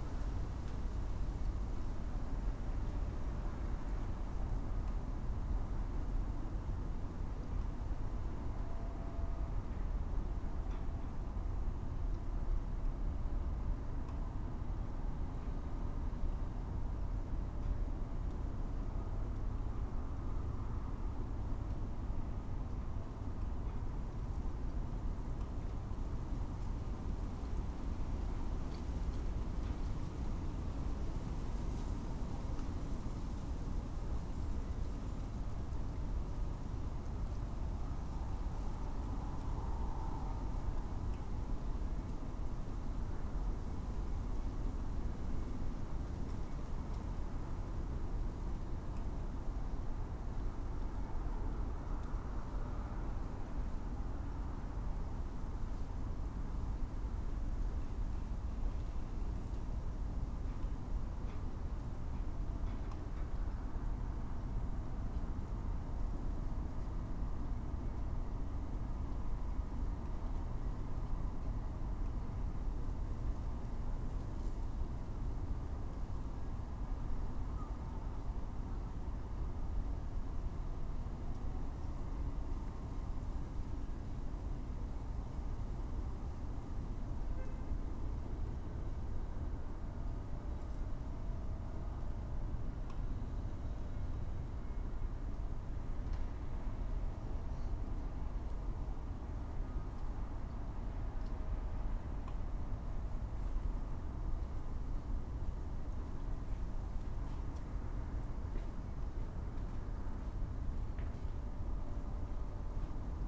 Vermont, Austin, TX - Snow
Snow Day
Recorded with Lom Usi and Sound Devices 633